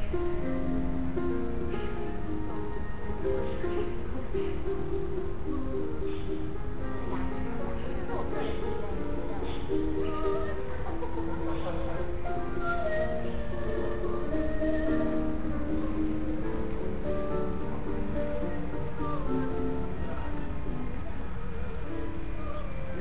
112台灣台北市北投區學園路1號國立臺北藝術大學音樂系 - 音樂系
音樂系旁的聲音